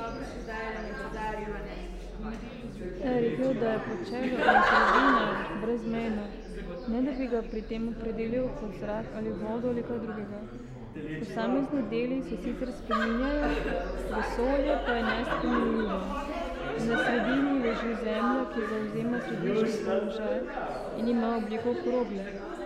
sonic fragment from 45m59s till 52m15s